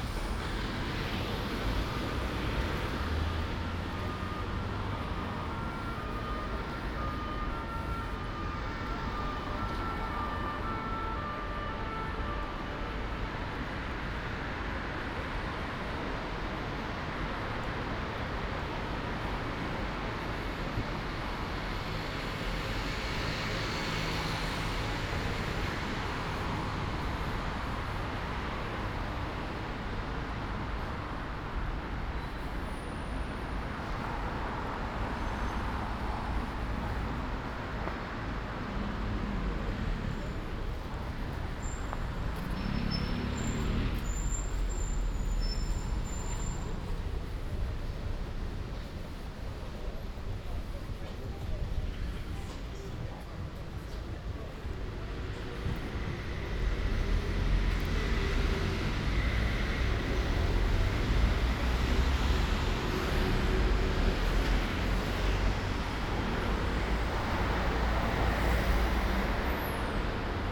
Thursday, October 15th 2020: Paris is scarlett zone fore COVID-19 pandemic.
Walking from Conservatoire Supérieure de Musique et de Danse de Paris to Gare du Nord to airbnb flat. Wednesday evening was announced the COVID-19 curfew (9 p.m.- 6 a.m.) starting form Saturday October 17 at midnight. This is -3 night before.
Start at 10:07 p.m. end at 10:42 p.m. duration 35’23”
As binaural recording is suggested headphones listening.
Both paths are associated with synchronized GPS track recorded in the (kmz, kml, gpx) files downloadable here:
For same set of recordings go to:
France métropolitaine, France, 15 October 2020